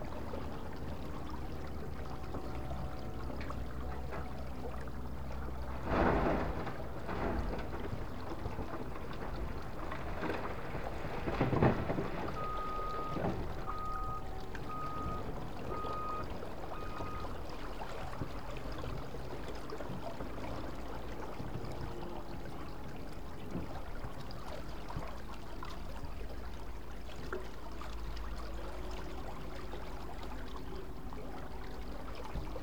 Vilkija, Lithuania, at a ferry